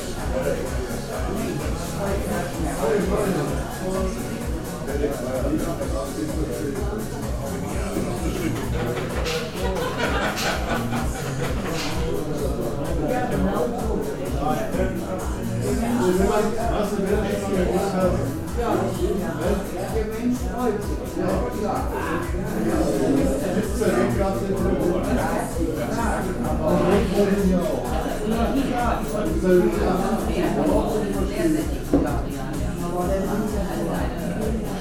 Steele, Essen, Deutschland - steeler treff
steeler treff, humannstr. 8, 45276 essen